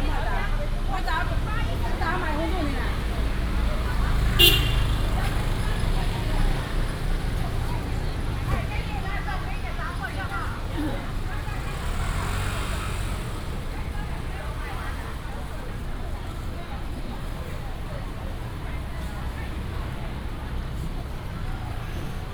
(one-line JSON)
{"title": "Zhongzheng Rd., Hukou Township - Walking on the road", "date": "2017-01-18 11:32:00", "description": "Walking on the road, Through the market, Traffic sound", "latitude": "24.90", "longitude": "121.05", "altitude": "87", "timezone": "Asia/Taipei"}